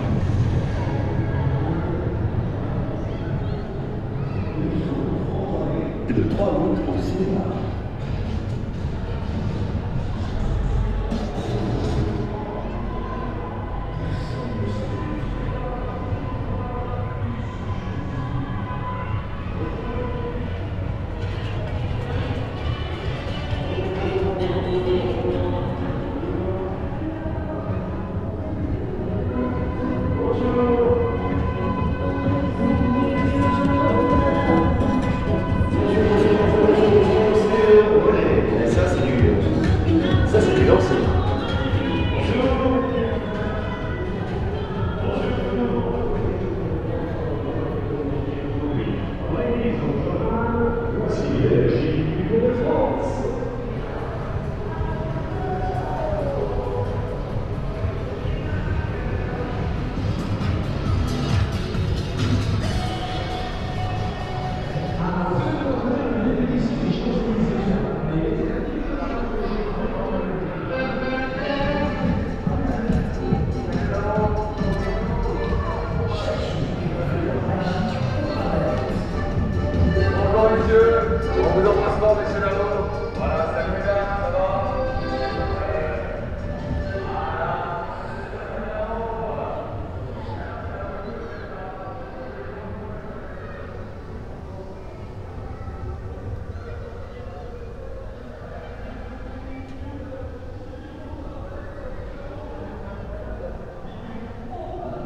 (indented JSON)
{
  "title": "Pau. Tour de France 'caravan'",
  "date": "2011-07-15 11:44:00",
  "description": "Le Tour 'caravan'. An advertising and promotional vehicle cavalcade that precedes the racing cyclists approximately one hour before the actual race itself passes by. Sound bouncing around in between the buildings from the next street, 300m away",
  "latitude": "43.31",
  "longitude": "-0.38",
  "altitude": "211",
  "timezone": "Europe/Paris"
}